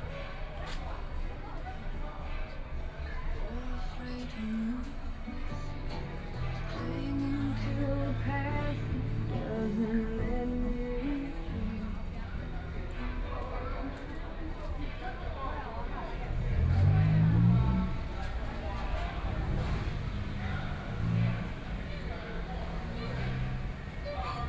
Chongqing N. Rd., Datong Dist. - walking on the Road
walking on the Road, Through a variety of different shops
Please turn up the volume a little
Binaural recordings, Sony PCM D100 + Soundman OKM II